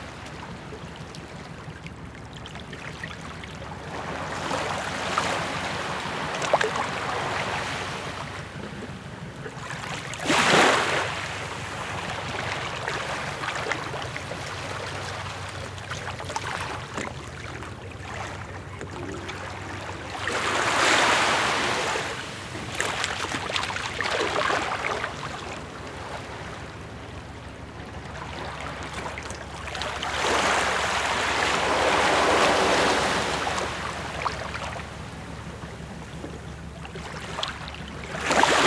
Water in Port Racine, the littlest harbor in France, Zoom H6